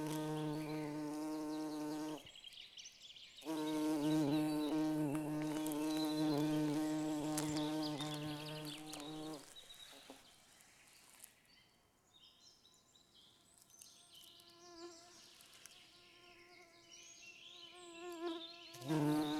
Lithuania, Utena, the flight of the bumblebee
microphone follows the bumblebee
4 June 2011, ~18:00